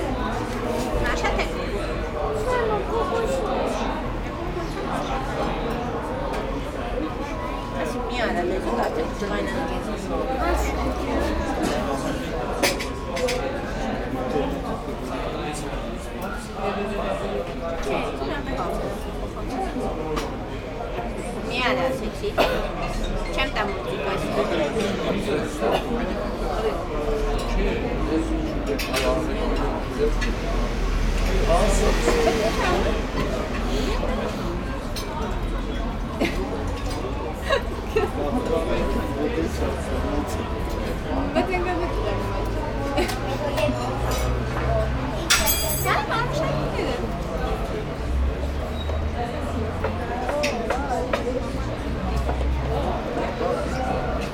Yerevan, Arménie - Night ambiance
Along the Zatar pizza restaurant, evening ambiance with clients quiet discussions and loud traffic on the nearby Tigran Mets avenue.
Yerevan, Armenia, September 2018